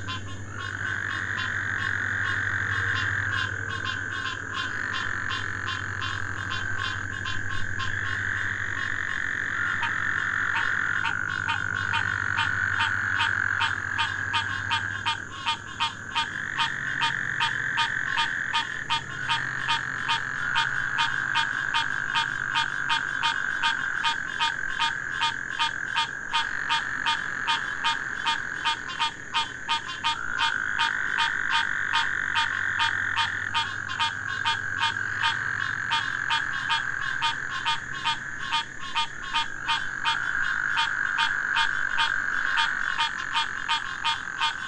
{
  "title": "Frogs rage with desire while train passes, Sugar Land, TX. - Frogs rage with desire while train passes",
  "date": "2012-08-01 12:47:00",
  "description": "Post rain mating calls of several species of frogs and insects, plus approaching passenger train, distant highway, cars, motorcycle, crickets, etc. Oyster Creek, Sugar Land, Texas, suburban, master planned community.\nTascam DR100 MK-2 internal cardioids",
  "latitude": "29.62",
  "longitude": "-95.68",
  "altitude": "30",
  "timezone": "America/Chicago"
}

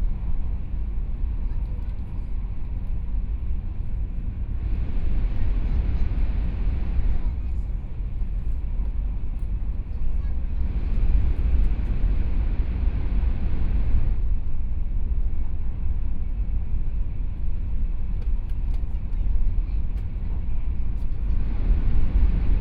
{
  "title": "Miaoli County, Taiwan - Taiwan High Speed Rail",
  "date": "2014-01-30 19:43:00",
  "description": "from Hsinchu Station to Taichung Station, Binaural recordings, Zoom H4n+ Soundman OKM II",
  "latitude": "24.45",
  "longitude": "120.70",
  "timezone": "Asia/Taipei"
}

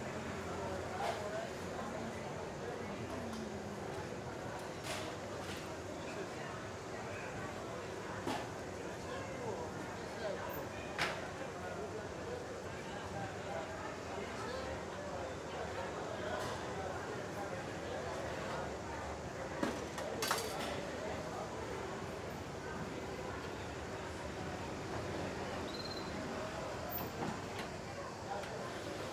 {
  "title": "Spartis, Kalamata, Grèce - Outside of the market, fake bird sound",
  "date": "2019-08-17 09:27:00",
  "latitude": "37.05",
  "longitude": "22.11",
  "altitude": "35",
  "timezone": "Europe/Athens"
}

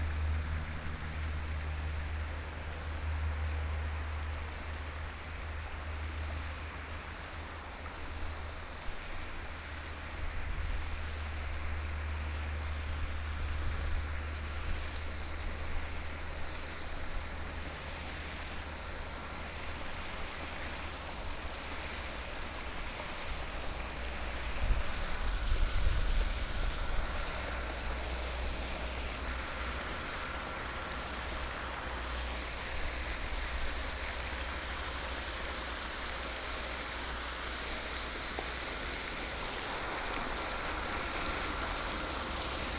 {
  "title": "壯圍鄉大福村, Yilan County - Breeding pond",
  "date": "2014-07-26 16:09:00",
  "description": "in the Breeding pond, Small village, Traffic Sound\nSony PCM D50+ Soundman OKM II",
  "latitude": "24.79",
  "longitude": "121.82",
  "altitude": "5",
  "timezone": "Asia/Taipei"
}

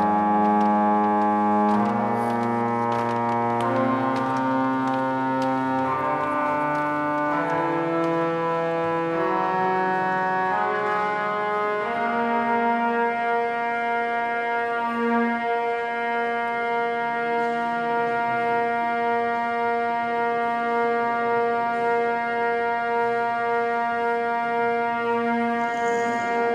{
  "title": "Miðbær, Reykjavik, Iceland - Tuning of church organ",
  "date": "2013-07-19 15:10:00",
  "description": "Accidental microtonal composition",
  "latitude": "64.14",
  "longitude": "-21.93",
  "altitude": "6",
  "timezone": "Atlantic/Reykjavik"
}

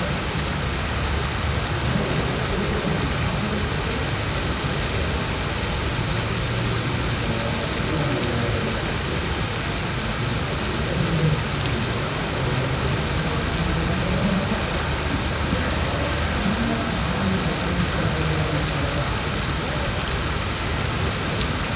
{
  "title": "River Drava, Maribor, Slovenia - bridge fountain from the surface",
  "date": "2012-06-14 22:06:00",
  "description": "same fountain recorded from the surface - recording started just a minute after the underwater one. in the background you can here the evening's euro2012 match via big screen tvs in all the bars along the river.",
  "latitude": "46.56",
  "longitude": "15.65",
  "altitude": "261",
  "timezone": "Europe/Ljubljana"
}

